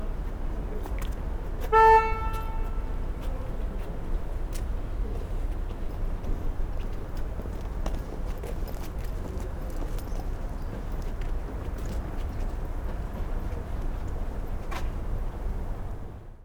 Autohupe. Lawaetzweg Ecke Altonaer Poststraße. 31.10.2009 - Große Bergstraße/Möbelhaus Moorfleet
Lawaetzweg Ecke Altonaer Poststraße 16